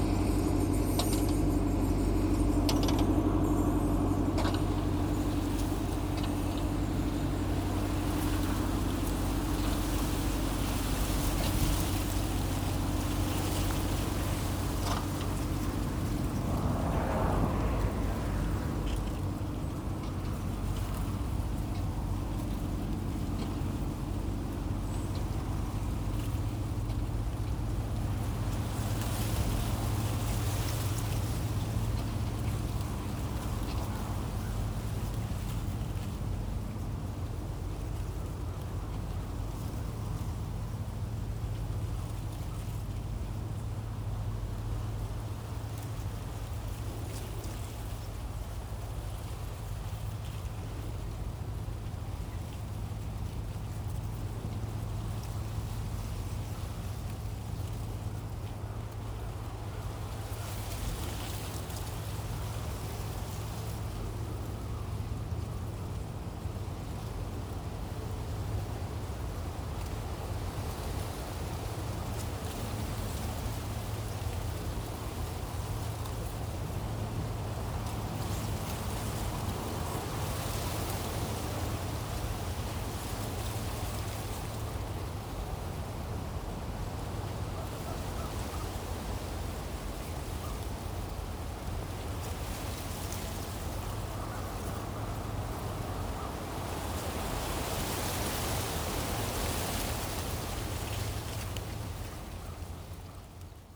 Kings, Subd. B, NS, Canada - Wind in maize, crows and a long approaching tractor
Wind blows though a field of maize waiting to be harvested. The cobs are heavy. Crows call. Passing vehicles are separate events here and there is time to hear the tractor droning up the hill. As it crests the angle to its trailer changes and allows more freedom for clanking and banging.
Canning, NS, Canada